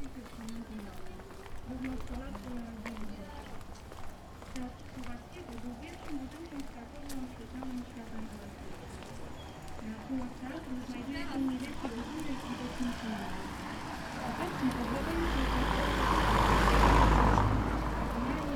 Soundwalk along ul. Szpitalna, Kraków, 13.15 - 13.25